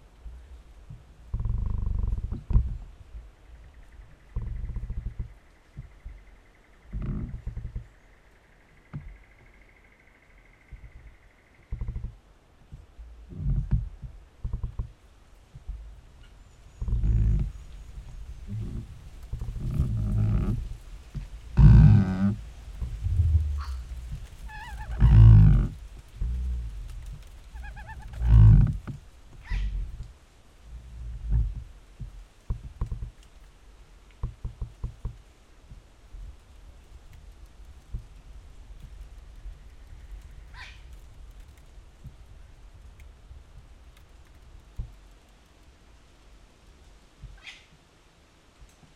Royal National Park, NSW, Australia - Two trees rubbing against each other on the coast
Recorded at the edge of the littoral rainforest, not far from Burning Palms beach.
Recorded with an AT BP4025 and two JrF contact microphones (c-series) into a Tascam DR-680.